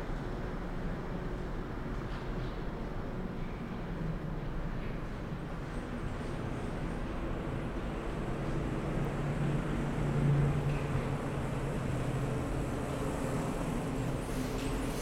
Tunnel cyclable, Duingt, France - Dans le tunnel
A l'intérieur du tunnel de la piste cyclable du lac d'Annecy à Duingt, à l'écoute des pneus, diversité des cyclistes de passage, un mono roue électrique....réverbération de ce tunnel courbe, vestige de l'ancienne ligne de chemin de fer Annecy Ugine de la compagnie PLM.
France métropolitaine, France, 16 August 2022